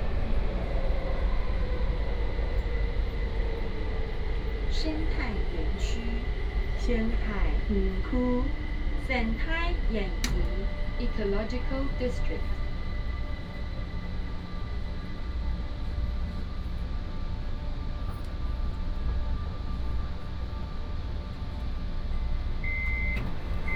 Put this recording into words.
Kaohsiung Mass Rapid Transit, from Zuoying station to Kaohsiung Arena, Traffic Sound, Binaural recordings